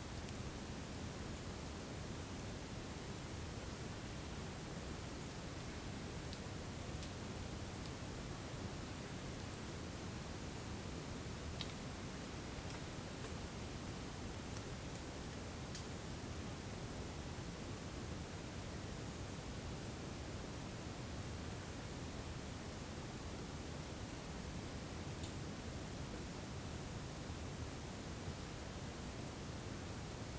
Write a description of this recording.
Opening the WLD2014 in a wonderful place Tierra del Trigo, north of the island of Tenerife, In the pines and in the village.